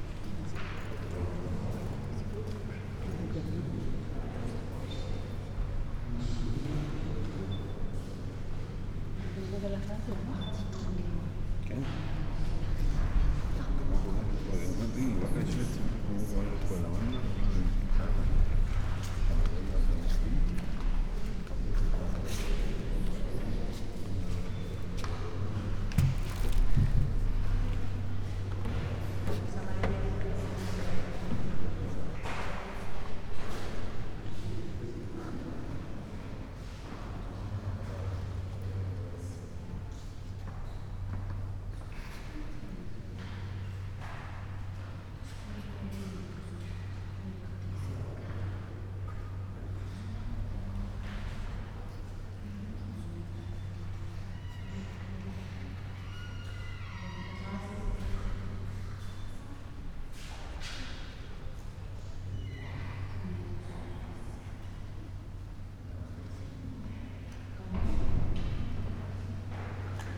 Euphrasian Basilica, Poreč, Croatia - basilica
inside sonic ambiance, red written words ”silencio!” define visitors view